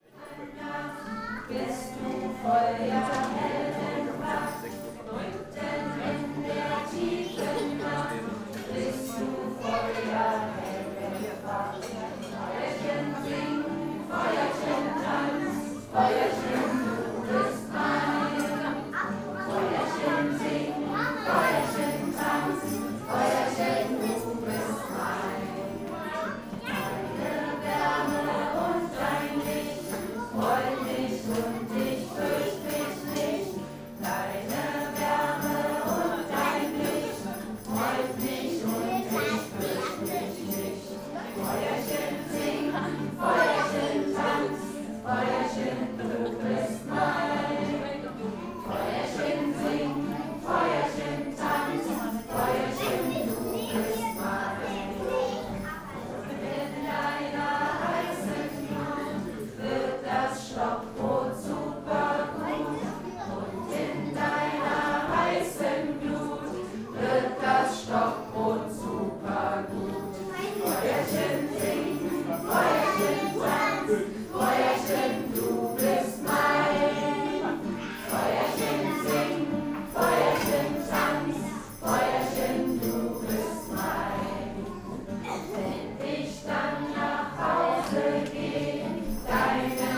Hasenheide, Kreuzberg, Berlin - kindergarden celebration
late St.Martin celebration in a multi-cultural kindergarden in Berlin Kreuzberg.
Berlin, Germany, 30 November 2012